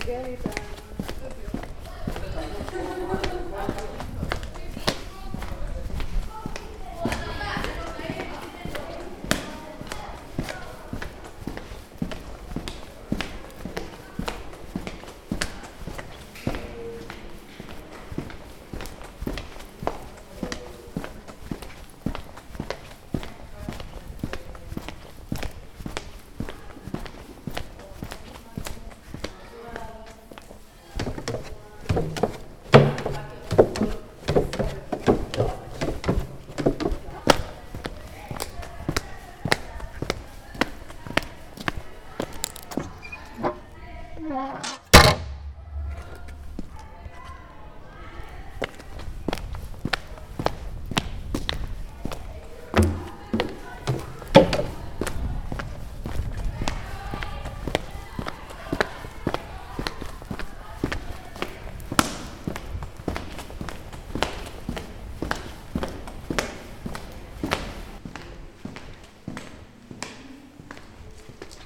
Hansen House, Jerusalem, Israel - Footsteps in Hansen House Interior
Recording of walking inside Hansen House, a former Hansen disease (Leprosy) hospital, today an arts and media center (Bezalel, Maamuta).
Uploaded by Josef Sprinzak
21 January 2014